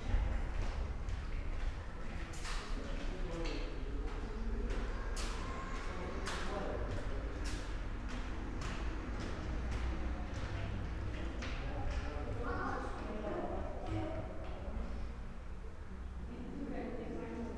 the Dominican Monastery, Ptuj - cloister, ambience
harp, steps, small talks, birds from outside ...
Ptuj, Slovenia, 28 June 2014, 18:39